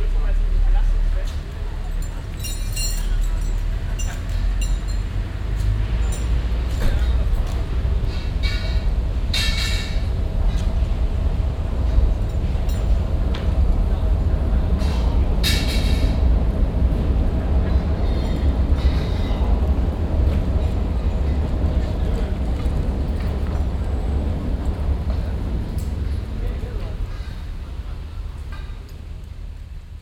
cologne, altstadt, rheinufer, an eisenbahnbrücke
an historischer eisenbahnbrücke, nachmittags, zugüberfahrt im hintergrund aufbau eines marktstandes
soundmap nrw: social ambiences/ listen to the people - in & outdoor nearfield recordings
29 December 2008, ~21:00